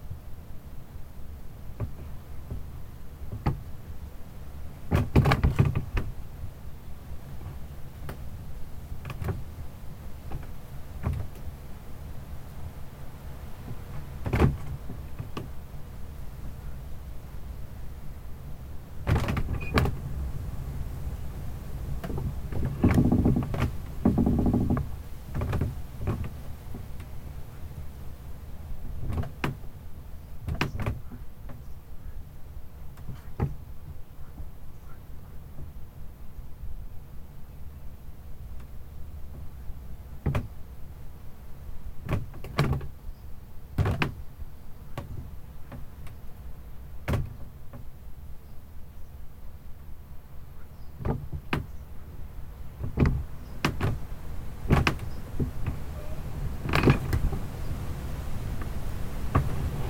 Šlavantai, Lithuania - Outdoor toilet cabin creaking in the wind
A combined stereo field and dual contact microphone recording of a wooden outdoor toilet interior, creaking against the pressure of wind. Contact microphone input is boosted, accentuating the character of wooden constructions brushing against each other.